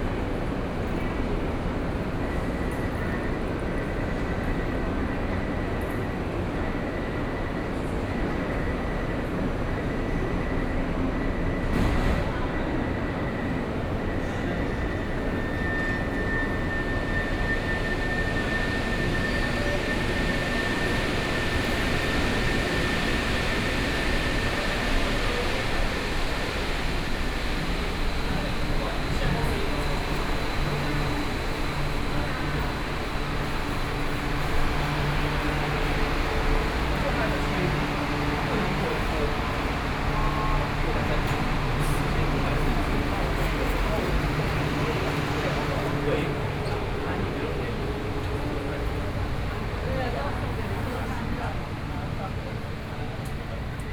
Xihu Station, Taipei - On the platform
On the platform waiting for the train, Binaural recordings, Sony PCM D50 + Soundman OKM II